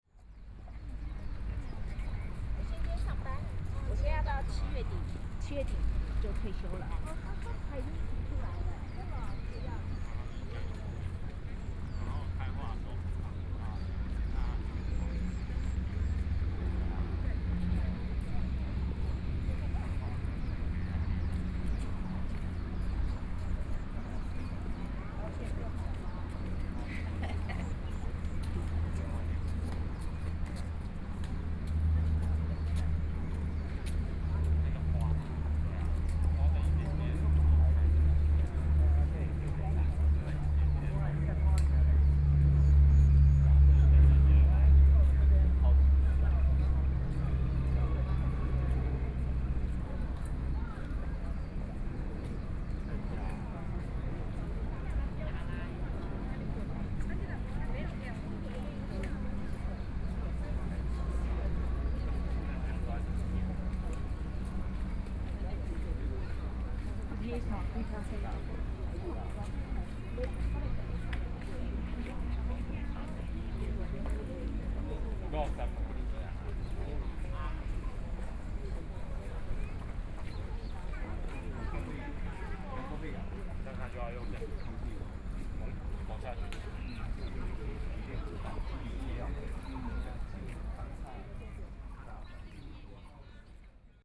碧湖公園, Taipei City - Walking through the park
A lot of people walk along the lake, Frogs sound, Insects sound, Birdsong, Traffic Sound, Aircraft flying through
4 May, 11:25